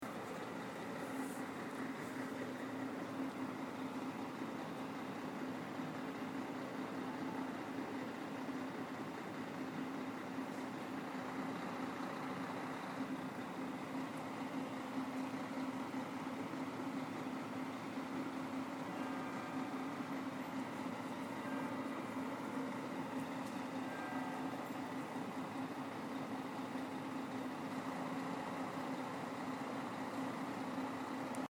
Hess. Landtag, Wiesbaden
Vor dem Eingang